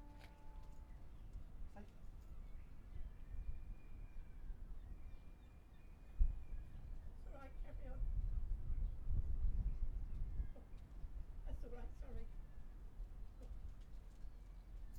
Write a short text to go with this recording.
Foghorn ... Seahouses ... air powered device ... open lavalier mics clipped on T bar fastened to mini tripod ...